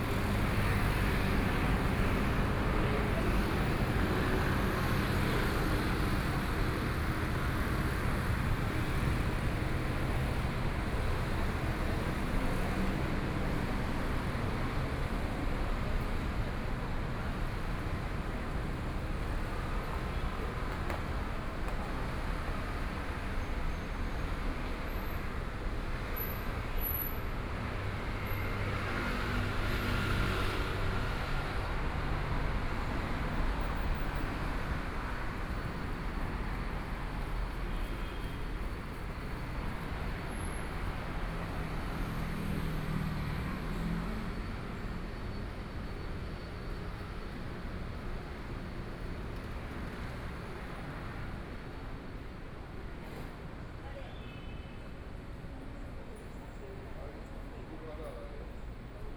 中山區中山里, Taipei City - Walking through the streets
Walking through the streets, Traffic Sound, Walking towards the north direction